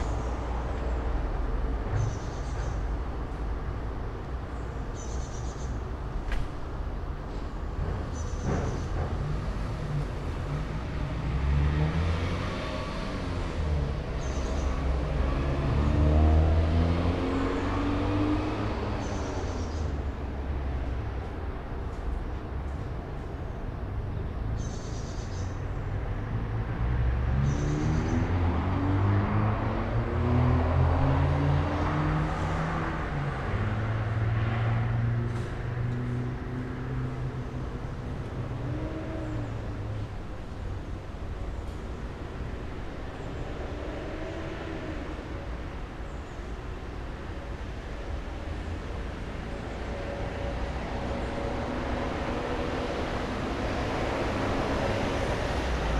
Moscow, Shipilovskiy pr. - Morning, Street Cleaning
Street cleaning, Street traffic